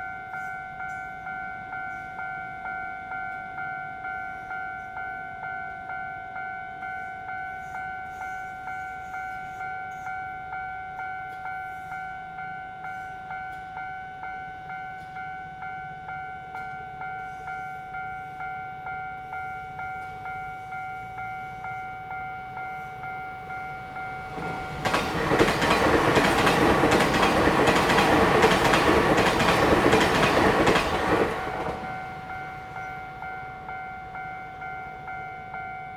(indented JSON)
{
  "title": "Changshun St., Changhua City - in the railroad crossing",
  "date": "2017-02-15 14:56:00",
  "description": "On the railroad crossing, The train runs through, Traffic sound\nZoom H2n MS+XY",
  "latitude": "24.09",
  "longitude": "120.55",
  "altitude": "24",
  "timezone": "Asia/Taipei"
}